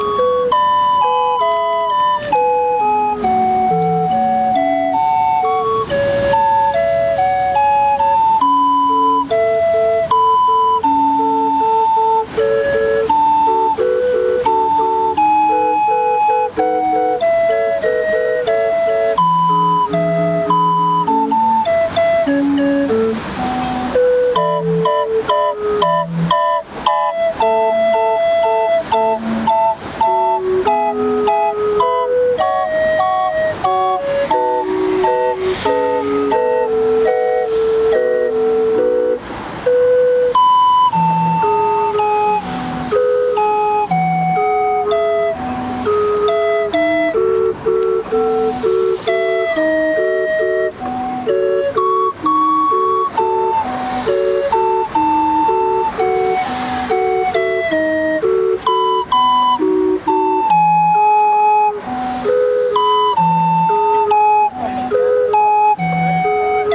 an unauthorized organ grinder played at the edge of the christmas market (pcm recorder olympus ls5)